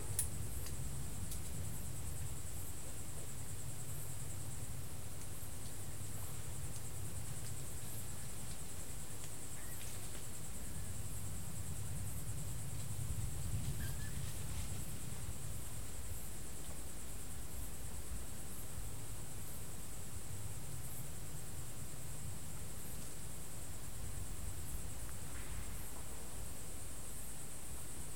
Lokovec, Čepovan, Slovenija - Three deers fighting for the territory